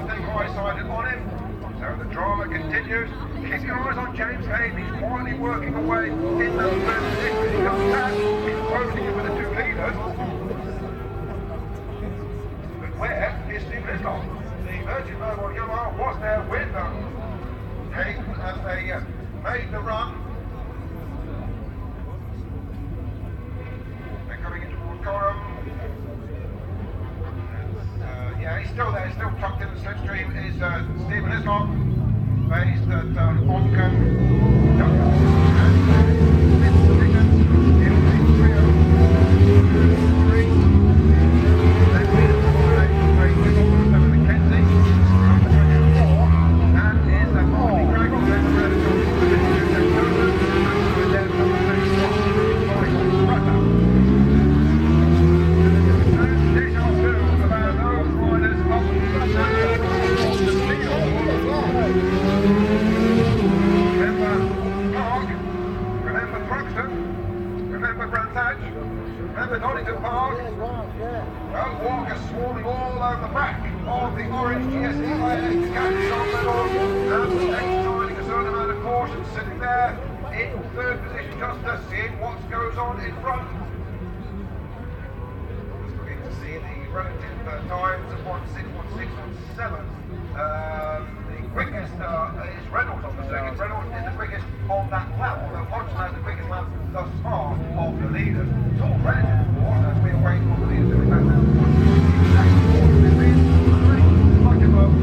British Superbikes ... 2000 ... race one ... Snetterton ... one point stereo mic to minidisk ... time approx ...
25 June, 12:00